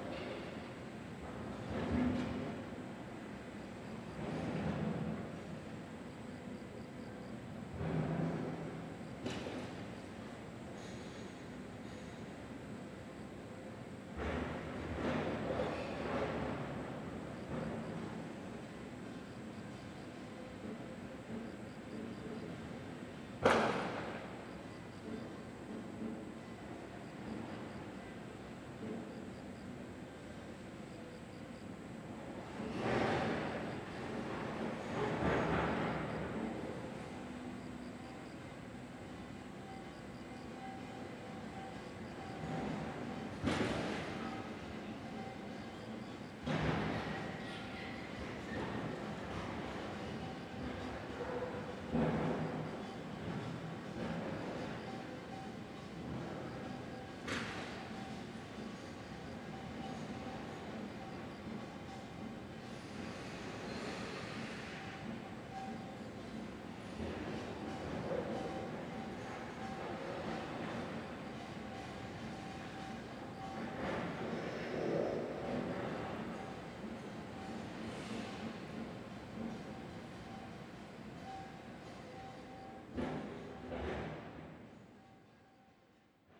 대한민국 서울특별시 서초구 서초3동 741-2 - Seoul Arts Center, Construction Yard
Seoul Arts Center, Construction Yard, students practising traditional percussion.
국립국악원 야외 공사장, 사물놀이 연습